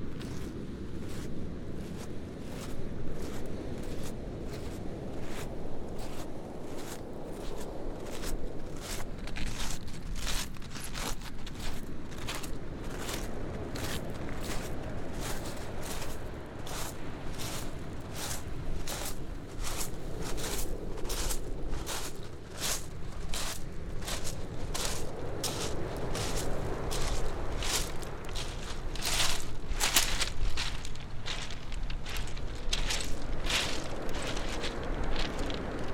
chesil cove, Portland, Dorset, UK - chesil cove
27 December, ~13:00